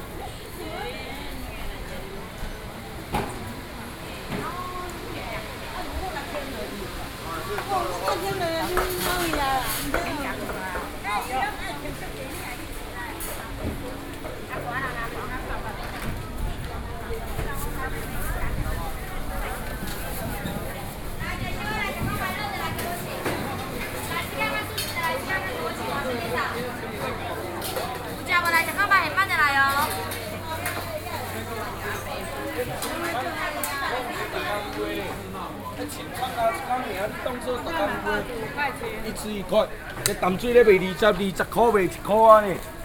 Ln., Linyi St., Zhongzheng Dist., Taipei City - Traditional markets